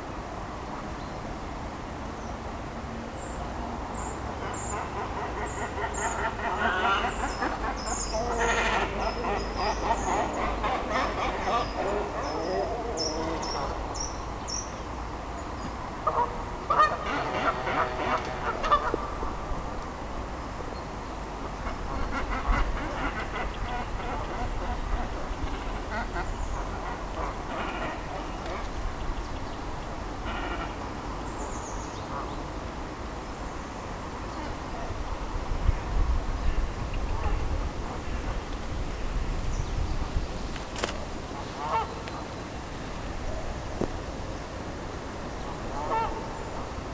Maintenon, France - Cormorants and Canada goose
Some cormorants and Canada goose are living on this small island, in the middle of the pond. On the morning when the sun is timidly awakening, these birds make a lot of noise.
December 2016